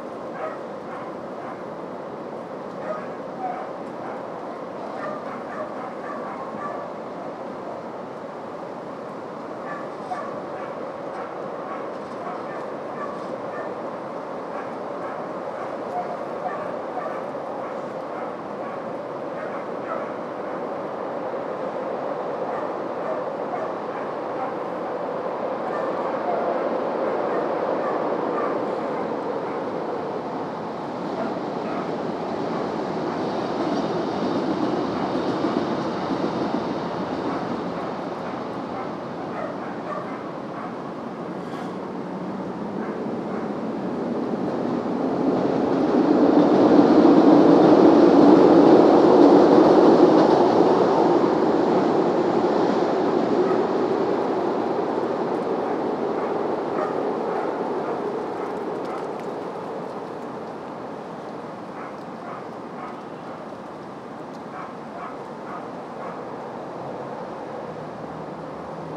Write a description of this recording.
Recording from outside pet care facility next door to the Chicago Artists Coalition where my studio was located.